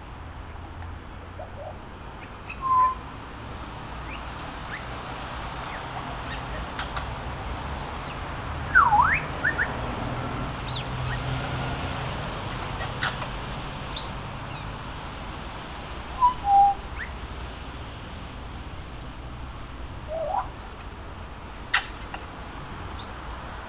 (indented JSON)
{
  "title": "Roskildevej, Frederiksberg, Denmark - Zoo birds and cars",
  "date": "2017-03-17 15:55:00",
  "description": "Diverse exotic bird songs recorded from outside the zoo. Passing cars behind the recorder.\nDivers chants d’oiseaux exotiques, capturé de l’extérieur du zoo. Bruit de trafic (rue frequenté, dérrière l’enregistreur)",
  "latitude": "55.67",
  "longitude": "12.52",
  "altitude": "22",
  "timezone": "GMT+1"
}